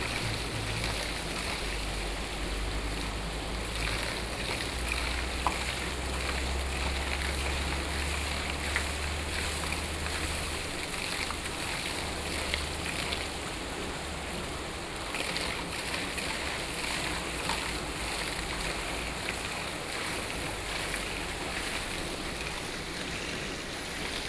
Fuxing Rd., 福興村 - Waterwheel
Waterwheel, Traffic Sound
Hualien County, Taiwan, 28 August 2014, ~08:00